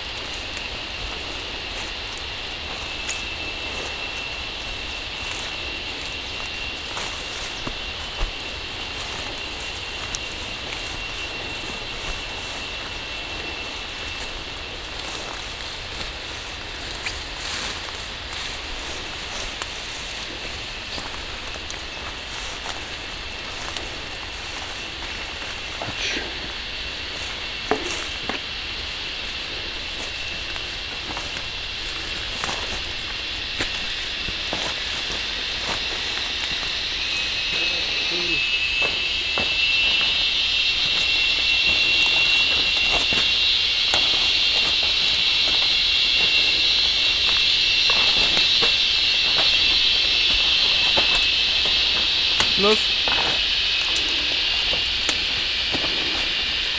Unnamed Road, Tambon Mok Cham Pae, Amphoe Mueang Mae Hong Son, Chang Wat Mae Hong Son, Thailan - Mörderzikaden und Trecking mit Ben
Killer cicadas, immensely shrieking, while trecking in the woods around Ban Huai Makhuea Som near the Myanmar border close to Mae Hong Son, Thailand. Ben is running an amazing refugees children school there, and offers informative and relaxing trecking tours.(theres another entry with this sound, it is wrongly located)
Amphoe Mueang Mae Hong Son, Chang Wat Mae Hong Son, Thailand, 25 August 2017, 16:30